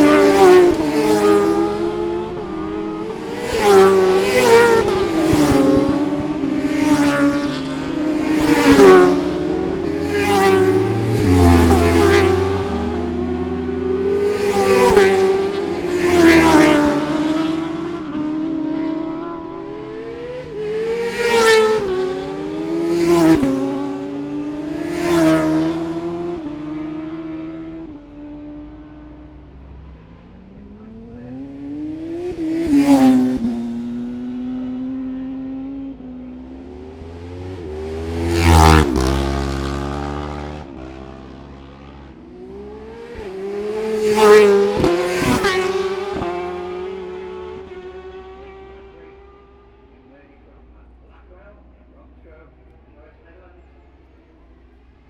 Scarborough, UK - motorcycle road racing 2012 ...
750cc+ qualifying plus some commentary ... Ian Watson Spring Cup ... Olivers Mount ... Scarborough ... open lavalier mics either side of a furry table tennis bat used as a baffle ... grey breezy day ...
15 April 2012